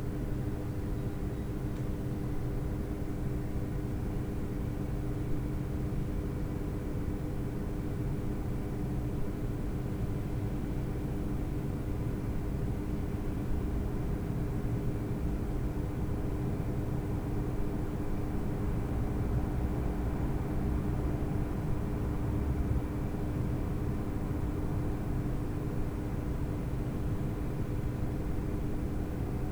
Lądowisko przy USK we Wrocławiu, Borowska, Wrocław, Polska - Covid-19 Pandemia
Uniwersytecki Szpital Kliniczny im. Jana Mikulicza-Radeckiego we Wrocławiu
12 April 2020, 15:10